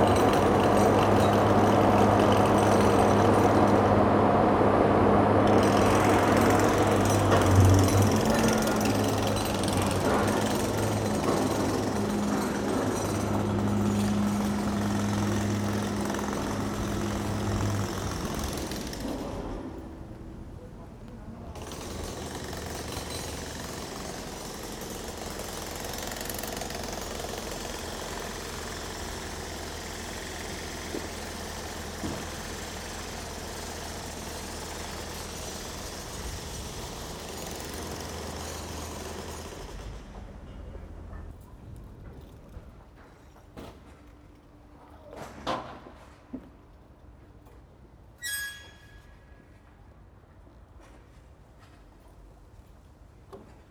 The Loudest Buildingsite in Berlin followed by blessed quiet
Berlin is a city of many buildingsites at the moment. This is loudest Ive yet heard. When the machine finally stops there is a sense of great relief and hearing expands into the quiet.